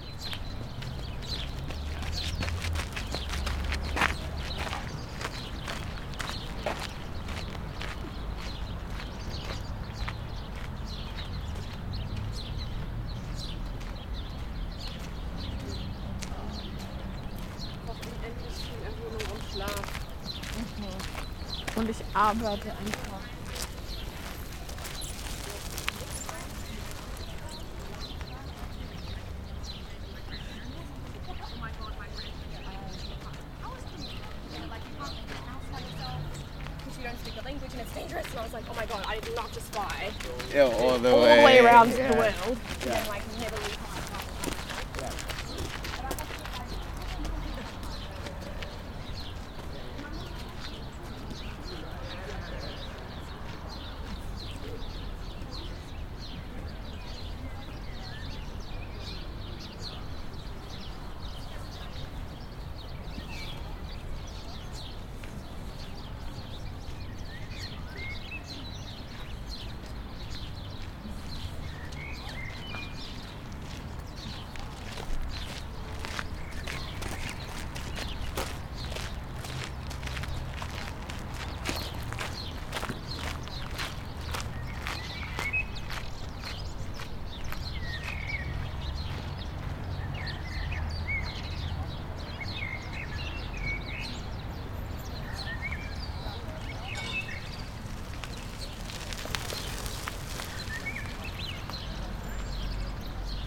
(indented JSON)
{
  "title": "berlin, paul-linke-ufer - Canalside ambience",
  "date": "2022-04-13 17:30:00",
  "description": "On the footpath by the Landwehrkanal. Recorded with Shure VP88 stereo microphone. Walkers, joggers, cyclists, birds. Distant traffic.",
  "latitude": "52.49",
  "longitude": "13.43",
  "altitude": "39",
  "timezone": "Europe/Berlin"
}